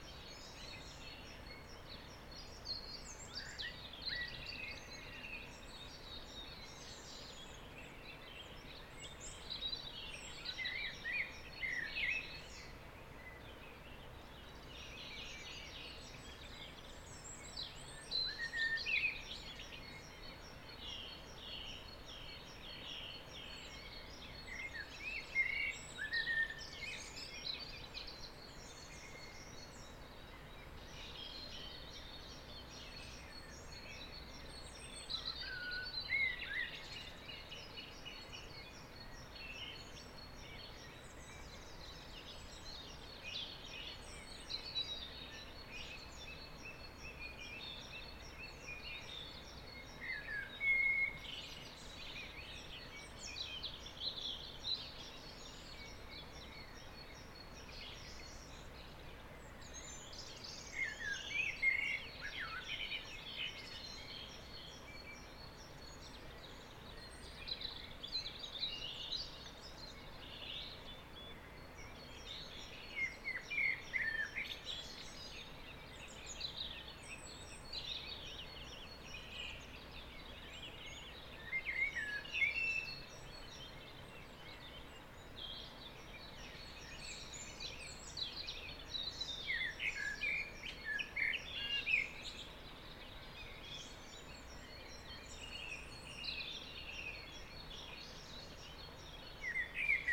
V Rokli, Radčice, Liberec, Česko - Tuesday morning
I woke up in the morning, opened the window and recorded birds chorus.